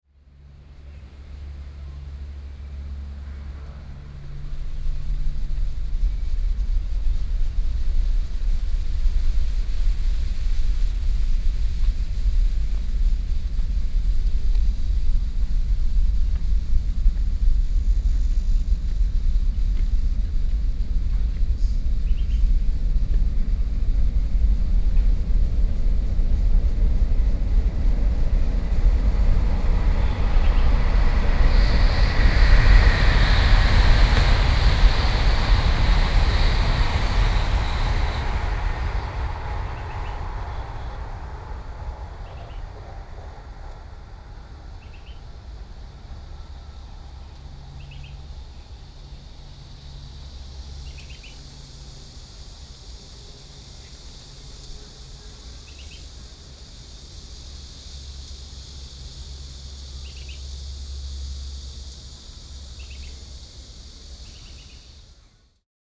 保安街二段267巷2弄, Shulin District, New Taipei City - Birds with high-speed rail
Birds with high-speed rail, The high-speed train traveling out from the tunnel after., Binaural recordings